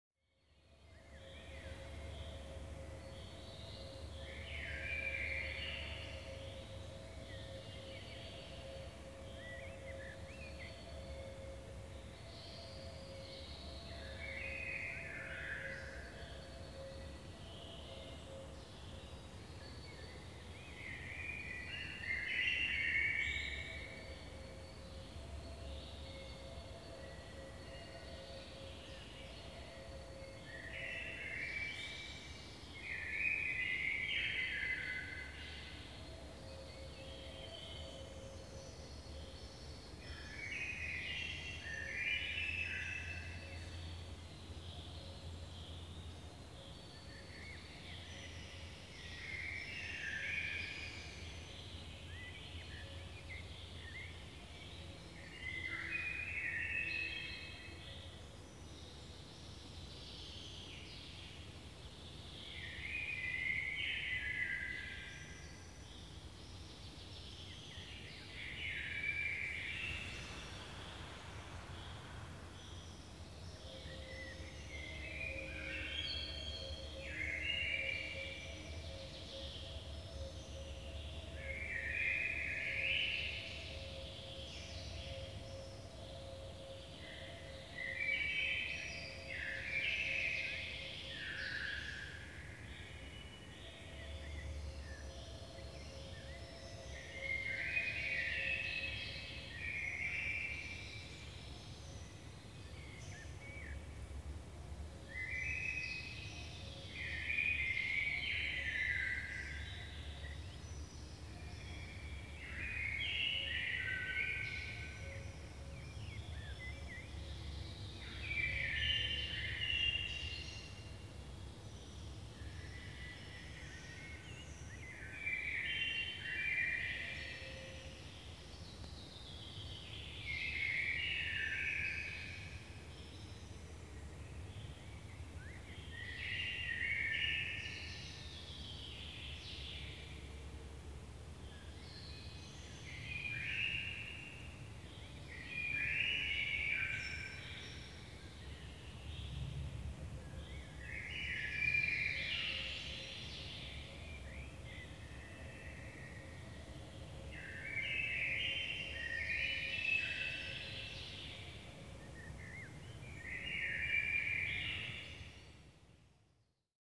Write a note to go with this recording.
empty silo bunker from Soviet times